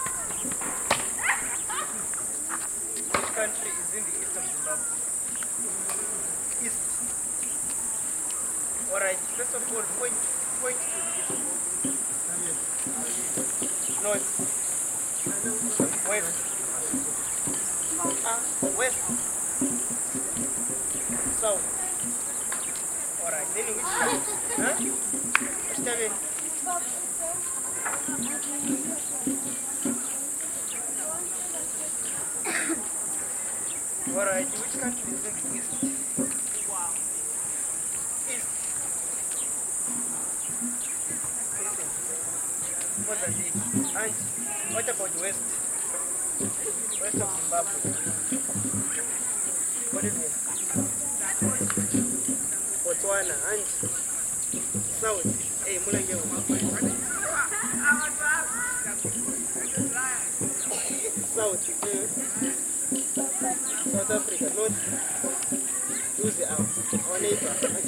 Siachilaba Primary School, Binga, Zimbabwe - Geography class in process...
…we are on the grounds of Siachilaba Primary School in the Binga district of the Zambezi Valley… listening to a geography class under a tree…
7 November 2012